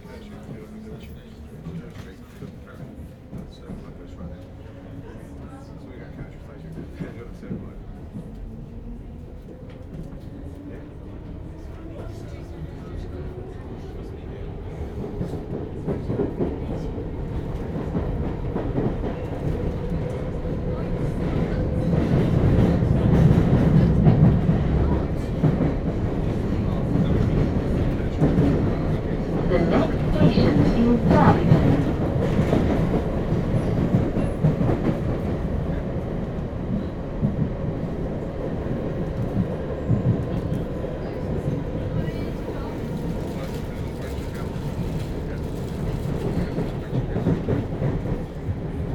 London, Hammersmith&City Line
London, subway ride on the Hammersmith&City line from Aldgate East to Kings Cross